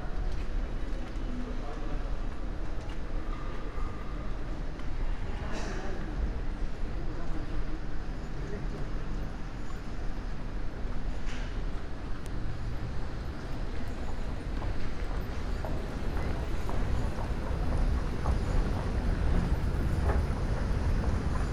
Centre Pompidou, Paris - Centre Pompidou, Paris. Escalator
Descending 6 floors of the outside escalator of the Centre Pompidou, Paris.